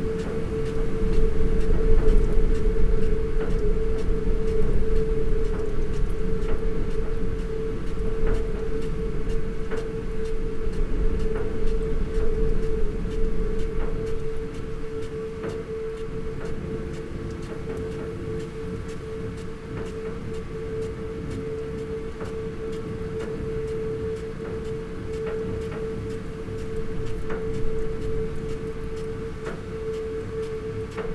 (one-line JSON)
{"title": "Green Ln, Malton, UK - field irrigation system ...", "date": "2020-05-23 07:29:00", "description": "field irrigation system ... an eco star 4000S system unit ... this controls the water supply and gradually pulls the sprayer back to the unit ... dpa 4060s in parabolic to MixPre3 ...", "latitude": "54.12", "longitude": "-0.56", "altitude": "95", "timezone": "Europe/London"}